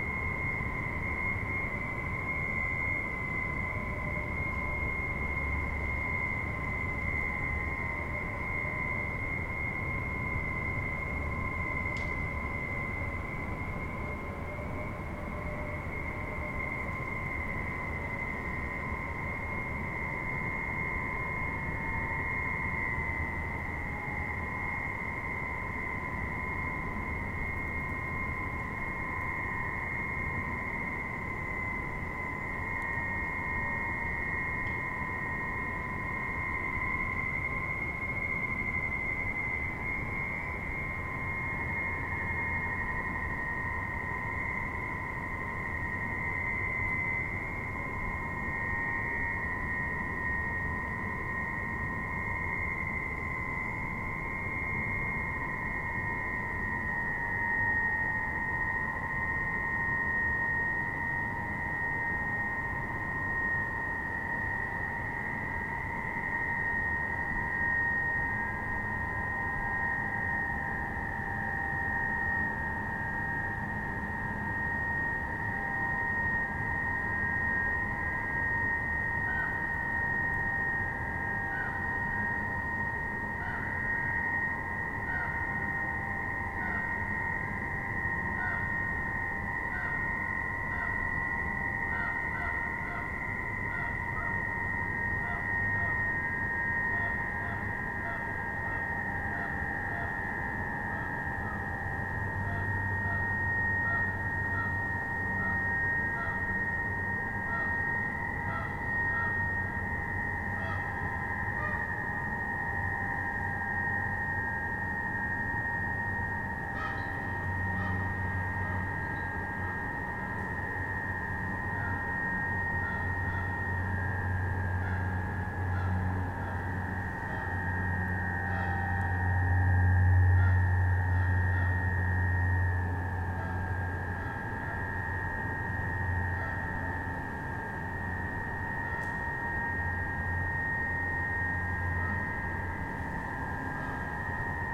Wind harp test in bunker on Hawk Hill, Headlands CA
testing a wind harp arrangement in the long bunker under Hawk Hill. The view from here is great!
United States of America